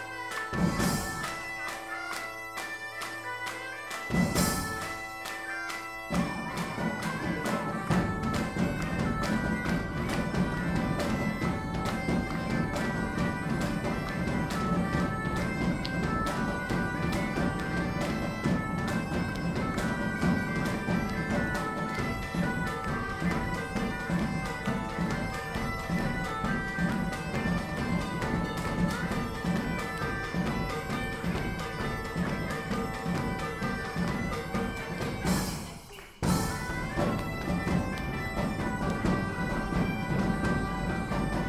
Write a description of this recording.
a band playing in the Detenice tavern